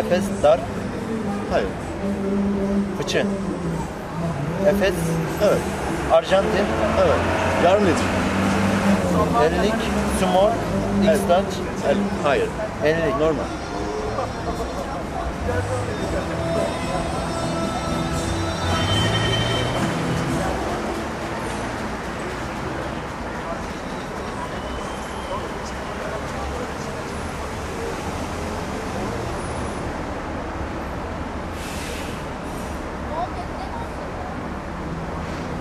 Fullmoon Nachtspaziergang Part XII
Fullmoon on Istanbul, walking down to Osmanbey. Getting tired of walking, sitting down on a table at the Café Prestij, making oneself understood to order a beer, scenic view on the crossroads. End of the walk.
Istanbul Province/Istanbul, Turkey, 23 October 2010